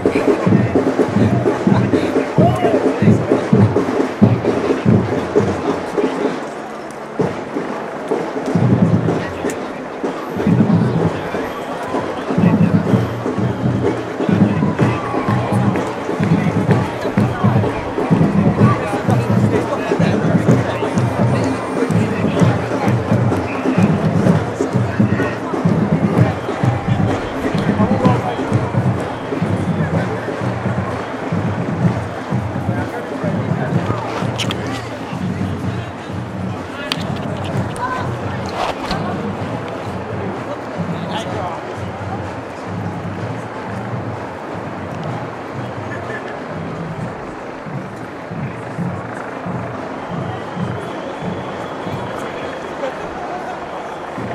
G20 protest - tent village on Bishopsgate - G20 protest - drummers, more helicopters
UK